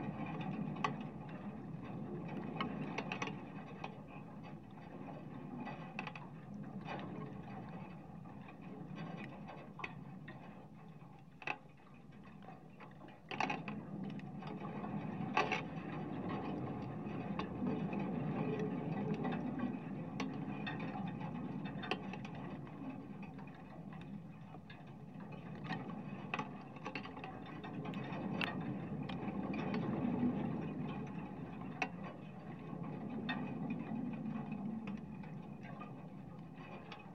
Biliakiemis, Lithuania, barbed wire, winter - barbed wire, winter
contact microphones on a barbed wire, winter, wind, snow